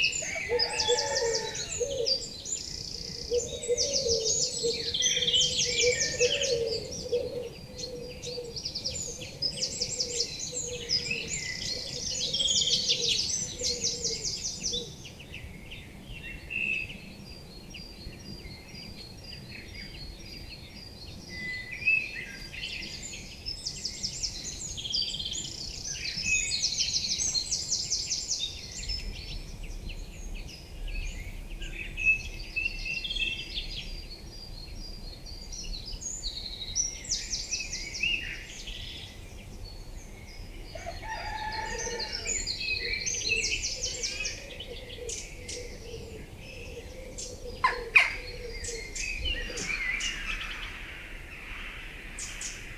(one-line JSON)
{"title": "Mont-Saint-Guibert, Belgique - Peaceful morning", "date": "2016-03-19 06:05:00", "description": "Early in the morning, a peaceful day begins in Belgium. Birds are singing in the nearby forest.", "latitude": "50.64", "longitude": "4.61", "altitude": "116", "timezone": "Europe/Brussels"}